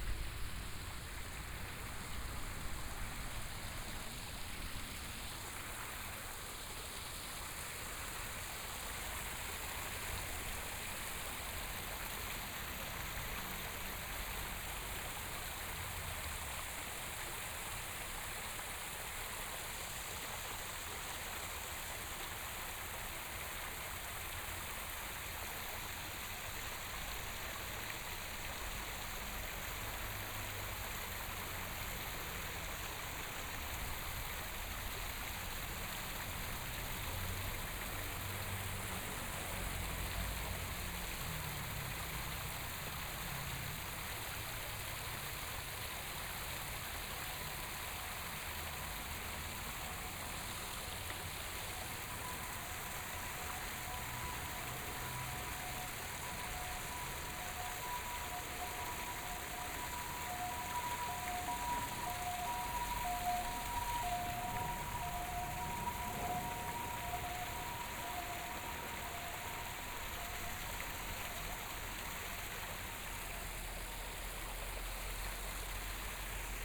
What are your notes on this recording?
Fountain, Binaural recordings, Zoom H4n+ Soundman OKM II